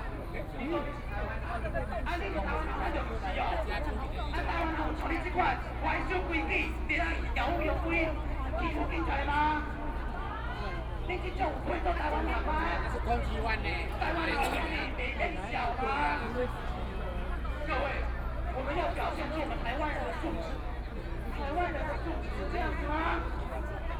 Taipei City, Taiwan - Confrontation
Underworld gang leaders led a group of people, In a rude language against the people involved in the student movement of students